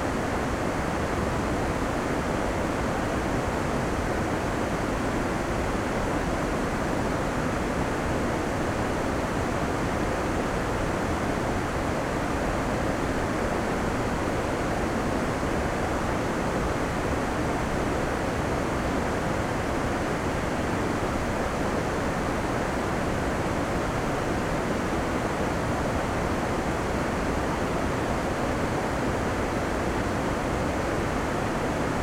Limburg an der Lahn, Deutschland - flow of river Lahn
flow oth the river at the embankment of the water mill
(Sony PCM D50, DPA4060)
13 July 2014, ~18:00